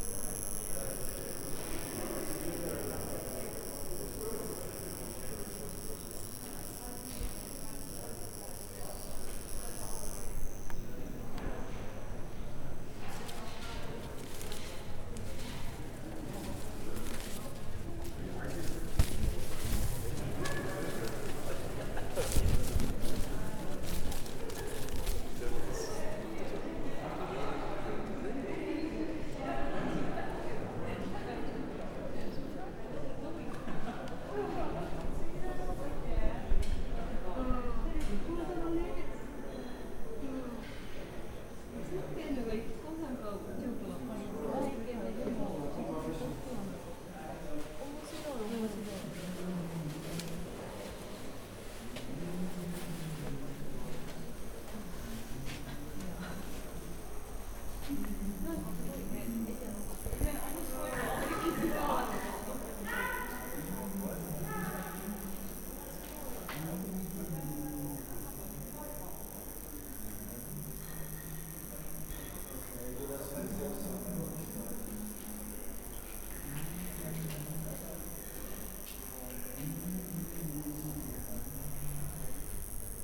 09.10.2010 Markthale - exhibition
walking around a art exhibition around the markthale